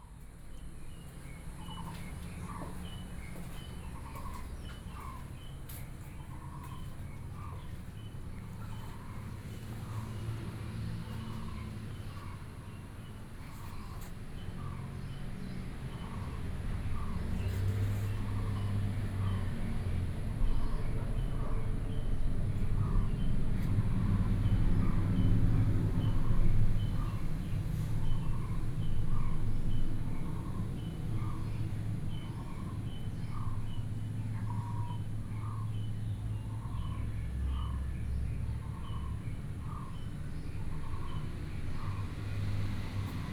Shuangxi Park, Taipei - In the Park
The park early in the morning, Sony PCM D50 + Soundman OKM II
信義區, 台北市 (Taipei City), 中華民國